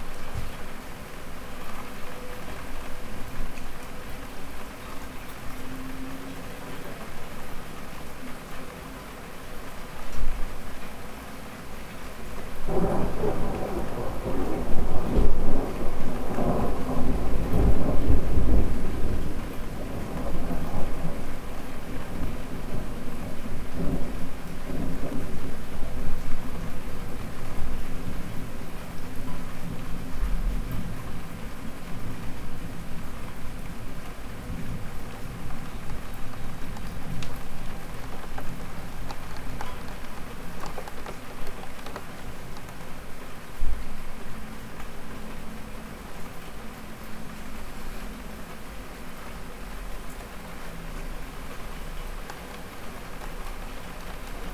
Brady Ave, Bozeman, Montana - Thunderstorm gearing up in Bozeman.
From a bedroom windowsill, a thunderstorm rolls across Bozeman.
Bozeman, MT, USA, 5 August, 16:31